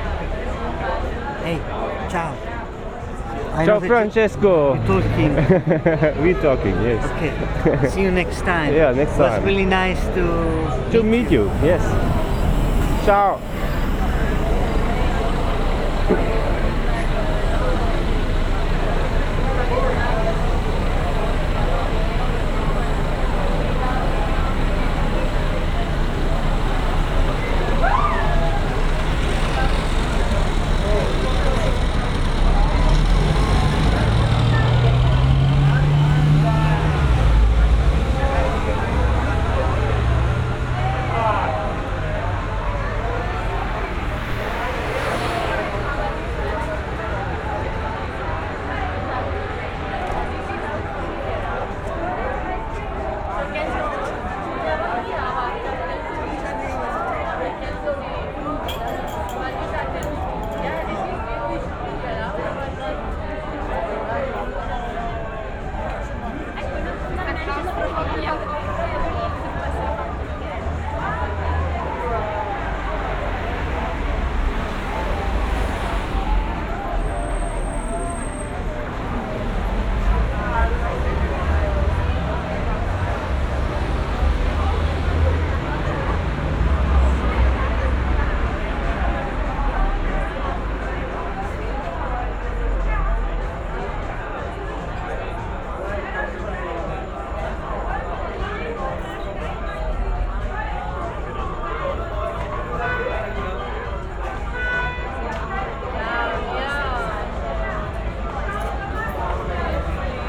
berlin, sonnenallee: vor o tannenbaum - the city, the country & me: in front of pub o tannenbaum
traffic noise, noise from inside the pub and a short intervention by francesco cavaliere
the city, the country & me: june 7, 2012